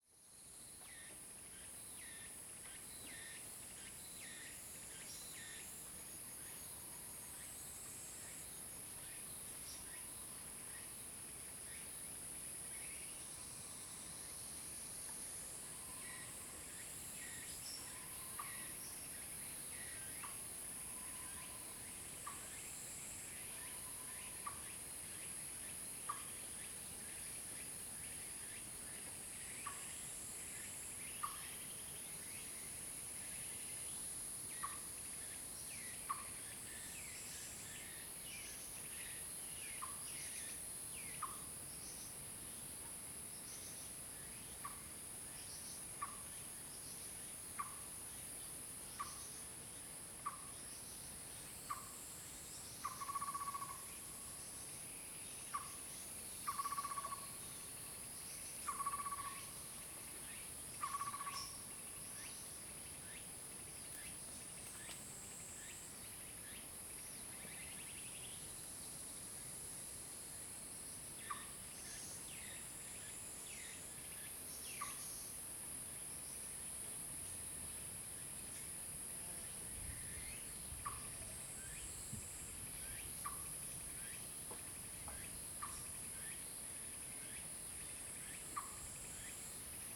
馬璘窟, 土地公廟, Puli, Taiwan - 土地公廟
Zoon H2n (XY+MZ) (2015/09/08 008), CHEN, SHENG-WEN, 陳聖文